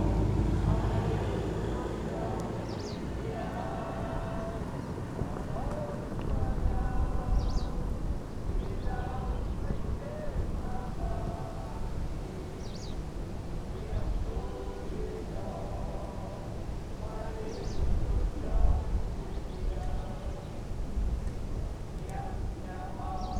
Saldutiškis, Lithuania, little town festival
recorded from the distance not so far from the flags plopping in the wind